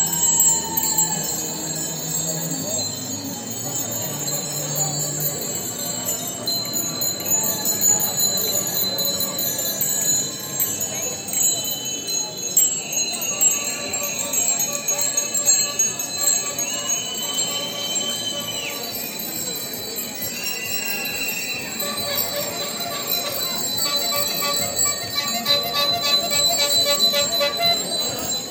Slovenska cesta, Ljubljana, Slovenia - Protest from the balconies goes to the wheels / Protest z balkonov gre na kolesa
After weeks of silence..... Ljubljana is very much alive again and it shows:
DON'T TAKE OUR FREEDOM TO US!
In the weeks when we, as a society, are responding jointly to the challenges of the epidemic, the government of Janez Janša, under the guise of combating the virus, introduces an emergency and curtails our freedoms on a daily basis. One after the other, there are controversial moves by the authorities, including increasing police powers, sending troops to the border, spreading false news about allegedly irresponsible behavior of the population, excessive and non-life-limiting movement of people, combating hatred of migrants, eliminating the most precarious from social assistance measures, spreading intolerance and personal attacks on journalists and press freedom.